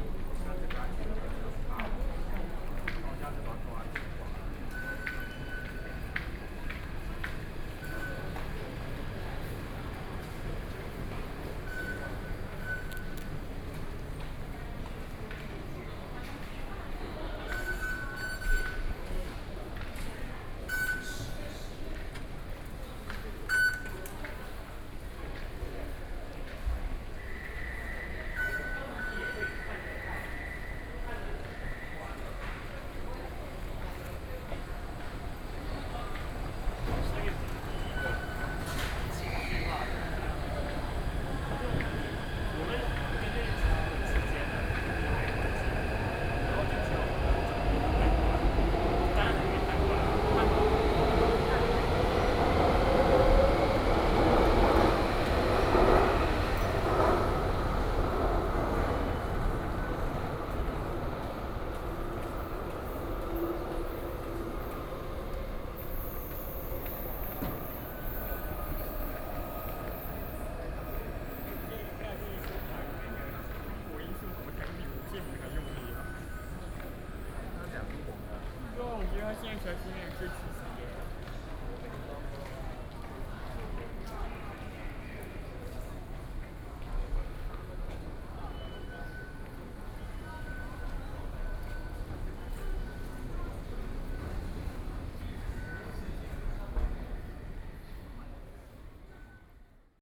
Chiang Kai-Shek Memorial Hall Station, Taipei - SoundWalk
walking into the MRT Station, Sony PCM D50 + Soundman OKM II
台北市 (Taipei City), 中華民國, 4 June 2013